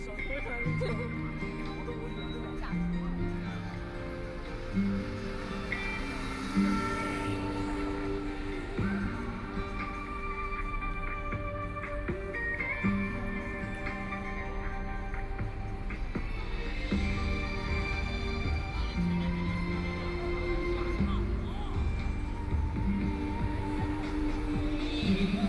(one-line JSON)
{"title": "Lishui Road, Shanghai - singing", "date": "2013-11-27 18:13:00", "description": "People singing in the street, Traffic Sound, Binaural recording, Zoom H6+ Soundman OKM II ( SoundMap20131127- 6 )", "latitude": "31.23", "longitude": "121.49", "altitude": "16", "timezone": "Asia/Shanghai"}